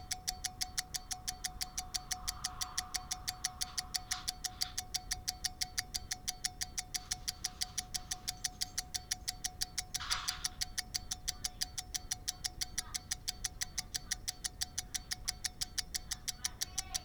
Unnamed Road, Malton, UK - pocket watch ticking ...
pocket watch ticking ... a rotary pocket skeleton watch ticking ... jrf contact mics attached to shell to olympus ls 14 ...
24 July